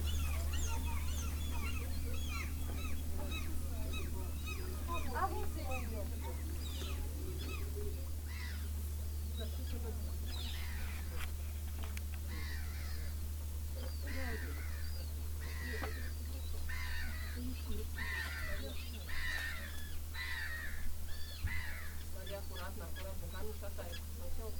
Kemsky District, Republic of Karelia, Russia - Inside and outside the abandoned wood church in Kem
Binaural recordings. I suggest to listen with headphones and to turn up the volume
There's an abandoned church in Kem, Russia, in front of the White Sea, where the movie Ostrov/Island was filmed.
Recordings made with a Tascam DR-05 / from Lorenzo Minneci